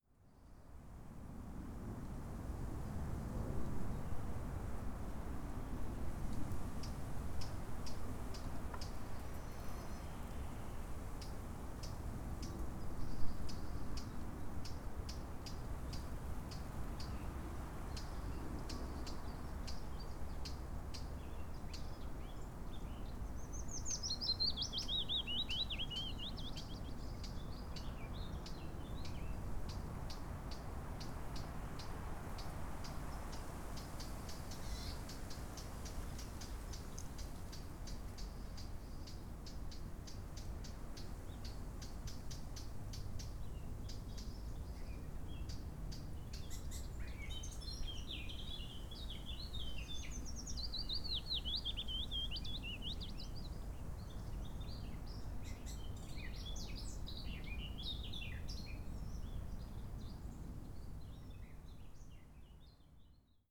Brightling Down, East Sussex - Blackcap, Willow Warbler
Tascam DR-05 internal mic with wind muff.
Robertsbridge, UK, 16 April 2017